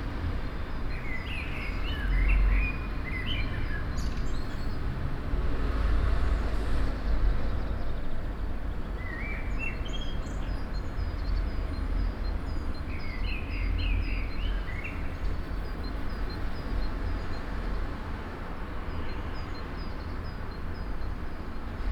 Lange Str., Hamm, Germany - noon bells
two bells competing... ambience notably quieter due to stay-at-home... beyond corona, both bells happen to be in need of repair or reset...